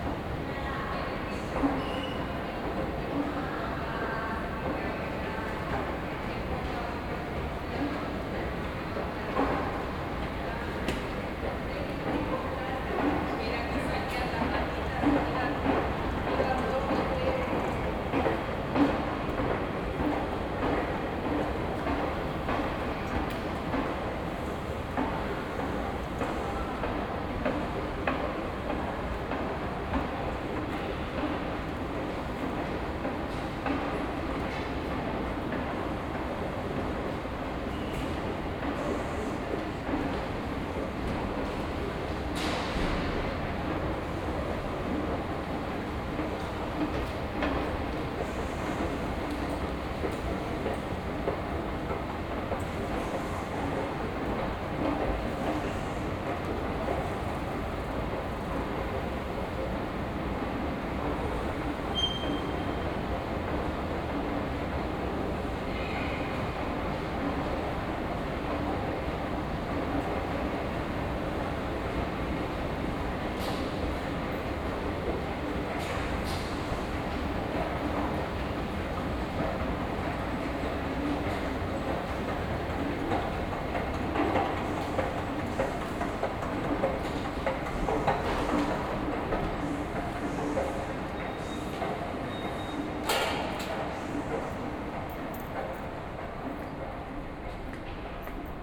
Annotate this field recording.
sound walk in metro station baixa / chiado, from ground to street level. binaural, use headphones